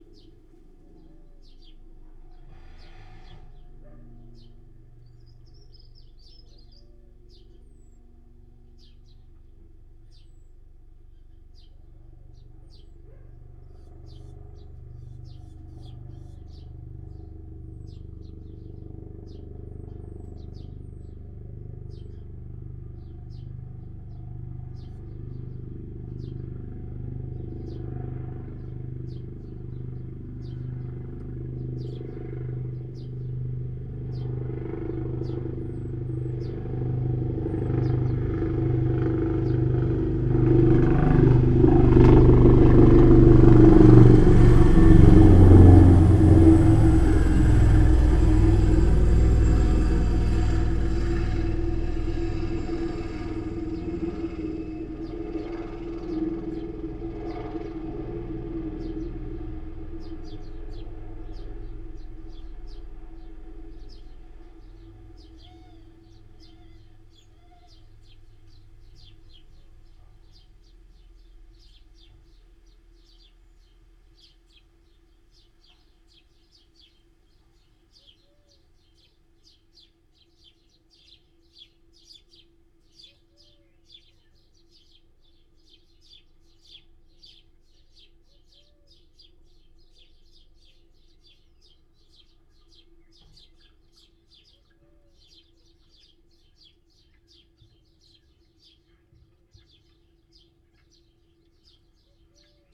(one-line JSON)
{"title": "Chapel Fields, Helperthorpe, Malton, UK - helicopter fly past ...", "date": "2019-07-31 14:30:00", "description": "helicopter fly past ... lavalier mics in a mop bucket half filled with water ... bird calls ... house sparrow ... collared dove ...", "latitude": "54.12", "longitude": "-0.54", "altitude": "77", "timezone": "Europe/London"}